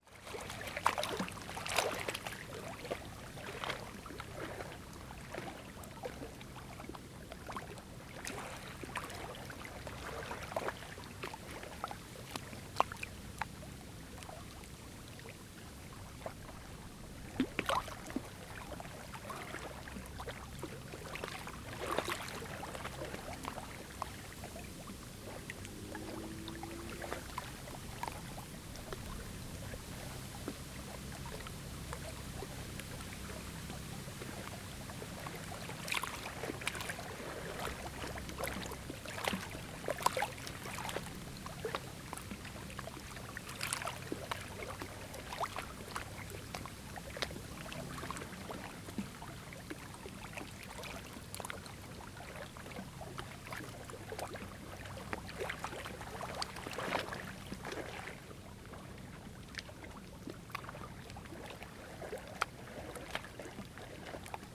Simpson Lake Shore, Valley Park, Missouri, USA - Simpson Lake Shore
Waves lapping at shore of Simpson Lake. Also sound of wind blowing through dry grass and distant traffic
Missouri, United States, 24 December 2020, ~16:00